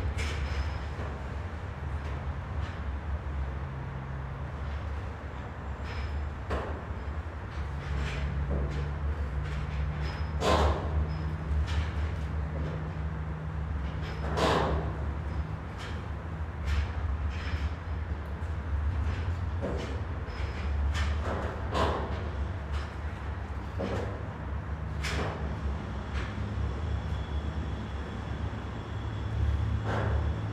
Stuyvesant Cove, New York, NY, USA - Stuyvesant Cove
Creaking sounds from the buoyant platform.
United States, 17 February 2022, ~10:00